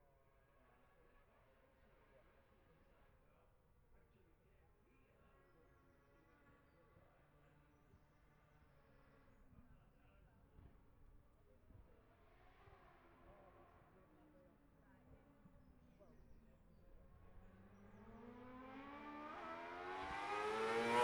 Jacksons Ln, Scarborough, UK - olivers mount road racing 2021 ...
bob smith spring cup ... olympus LS 14 integral mics ... running in some sort of sync with the other recordings ... from F2 sidecars to classic superbikes practices ... an extended ... time edited recording ...
22 May 2021, ~11:00